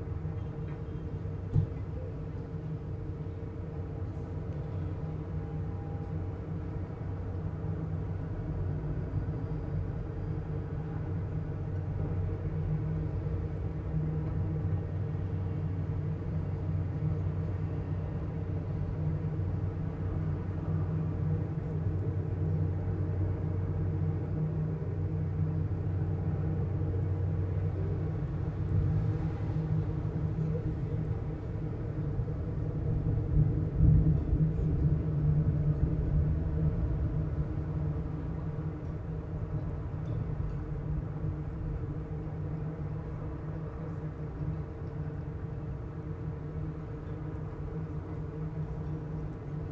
Maribor, Slovenia - one square meter: handrail support poles, fourth pair
a series of poles along the riverside that once supported handrails for a now-overgrown staircase down to the waters edge. the handrails are now gone, leaving the poles open to resonate with the surrounding noise. all recordings on this spot were made within a few square meters' radius.